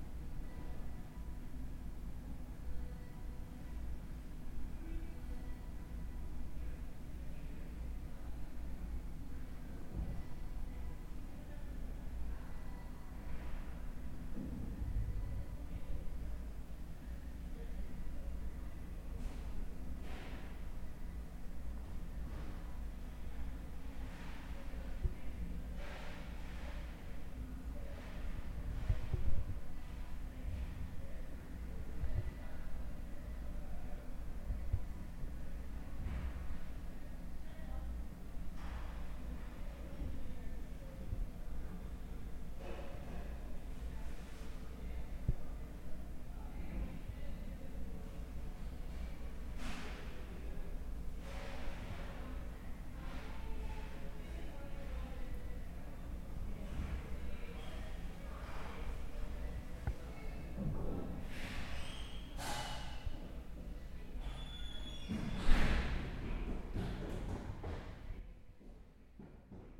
Bolton Hill, Baltimore, MD, USA - Stairway to Narnia
A well known stairwell in Fox Building.
25 September 2016